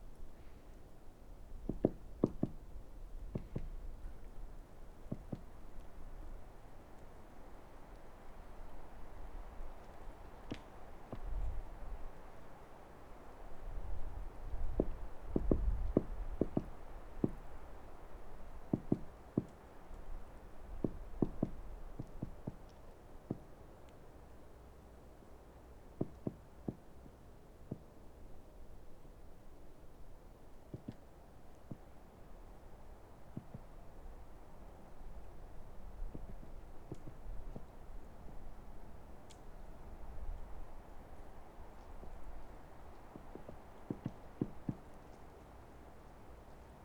woodpecker's morse in wintery swamp
Lithuania, 25 February 2013